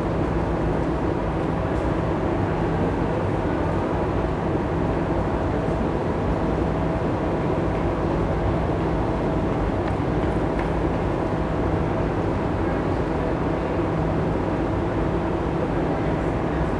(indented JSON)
{
  "title": "Very loud air conditioning in the foot tunnel under Cannon street station, Cousin Ln, London, UK - Very loud air conditioning under Cannon Street Station",
  "date": "2022-05-17 12:28:00",
  "description": "Another tunnel for the Thames footpath and another loud air conditioning outlet. It is always dry and homeless people sleep here. It is beside the Banker riverside pub, very popular at lunchtime and a couple of historic cannons (guns) are placed incongruously nearby. Typical City of London juxtapositions. Tourists and joggers pass by.",
  "latitude": "51.51",
  "longitude": "-0.09",
  "altitude": "10",
  "timezone": "Europe/London"
}